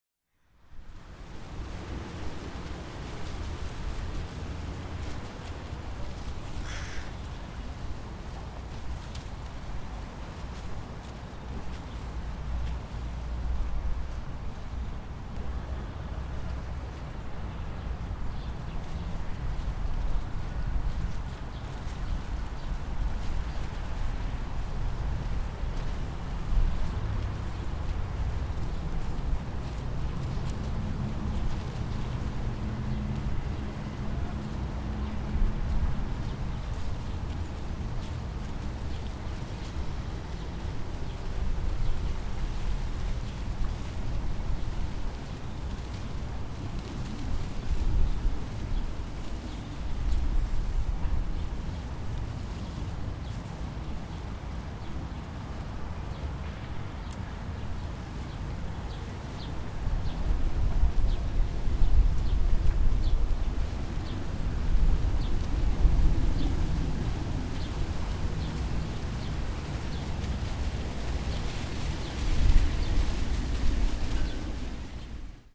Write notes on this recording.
Das dumpfe Hintergrundgeräusch der vielbefahrenen Pfingstweidstrasse kämpft gegen die Windgeräusche, die durch die farbigen Bänder der Installation von Potrc / Pfannes / Hartenberg streichen: Poesie gegen Alltag. In der Brachenlandschaft haben sich aber auch andere niedergelassen: Spatzen, Krähen, Singvögel. Marjetica Potrc/Eva Pfannes, Sylvain Hartenberg (Ooze) (The Public Space Society, 2012)